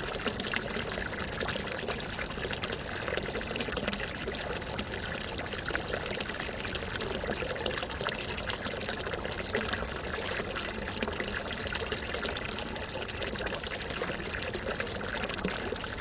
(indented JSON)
{
  "title": "Petřínské sady, Praha, Czechia - Chrchlající pramen na Petříně",
  "date": "2019-11-07 17:49:00",
  "description": "Pramen Petřínka vytéká z trubky v žulových kostkách a padá do oválného korýtka a do kanalizační vpusti. Nápis na mosazné tabulce \"studánka Petřínka 1982\" připomíná rok úpravy studánky do dnešní podoby. V roce 1986 proběhlo slavnostní otvírání studánky za účasti Elmara Klose, který bydlel v domku nahoře u Hladové zdi. Studánka byla tehdy ozdobena sochou, kterou během dvou dnů někdo ukradl. Zdroj vody byl v minulosti údajně měněn, dnes je do studánky sveden výtok z drobné štoly vylámané v pískovcových výchozech nad studánkou. Podzemní voda je pitná a přítok kolísá, občas v pravidelných periodách trubka chrchlá, jak reaguje na klesající hladinu ve studně. Celý Petřín - zahrady Kínská, Nebozízek, Seminářská, Lobkovická a Strahovská tvoří rozsáhlý vodní rezervoár. Voda byla ze Strahovských a Petřínských pramenů ještě v 60 letech rozváděna potrubím do malostranských paláců, nemocnice, klášterů, kašen, dětských brouzdališť, škol, apod.",
  "latitude": "50.08",
  "longitude": "14.40",
  "altitude": "279",
  "timezone": "Europe/Prague"
}